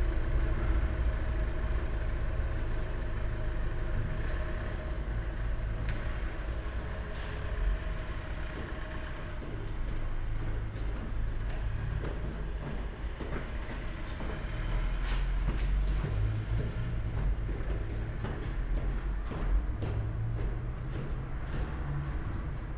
Südbrücke railway bridge, Köln Poll - stairway ambience, passers-by
Köln Südbrück railway bridge, stairway ambience, joggers, bikers and passers-by
(Sony PCM D50, DPA4060)
Cologne, Germany, 13 August 2013